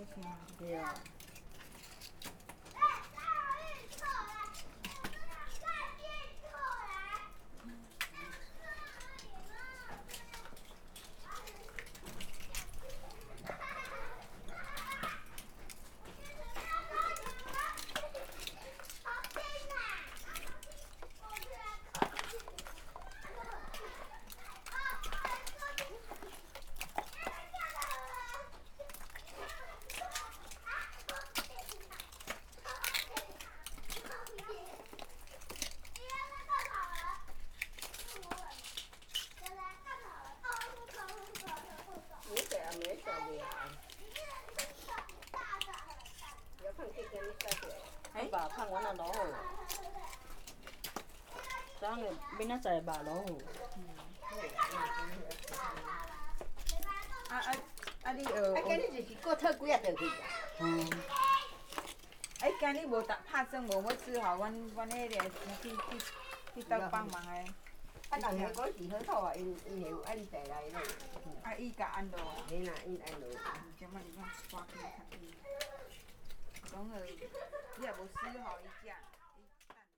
芳苑鄉芳中村, Changhua County - Small village
A group of old women are digging oysters, Children are playing
Zoom H6 MS +Rode NT4
Changhua County, Fangyuan Township, 芳漢路芳二段